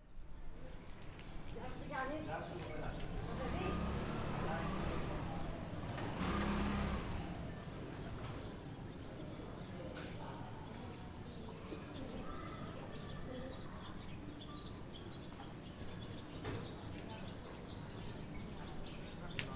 People chatting. Birds tweeting in the background.